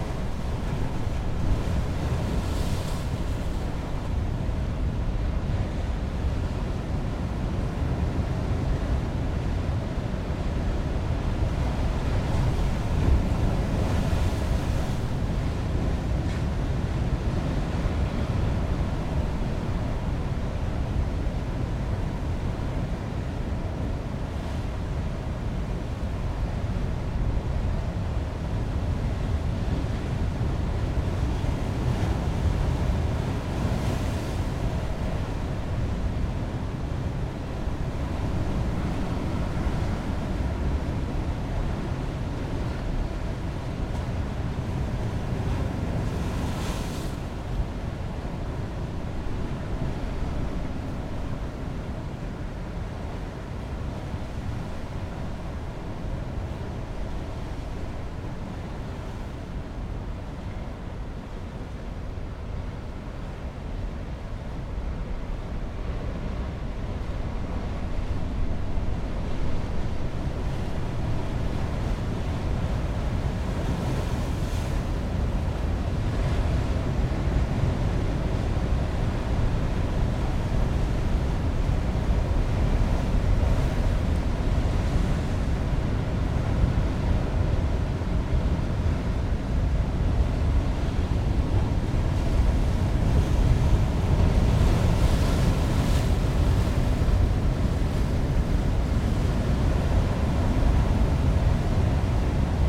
Chemin du Phare, Perros-Guirec, France - Heavy waves and Sea - distant rumble [Ploumanach]
à l'abri du vent entre 2 rochers. Le grondement des vagues au loin.
sheltered from the wind between 2 rocks. The roar of the waves in the distance.
April 2019.